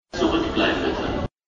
Moritzplatz Untergrund
Ich will so gerne mit den andern gehen, aber imm muß ich zurückbleiben bitte.
Deutschland, European Union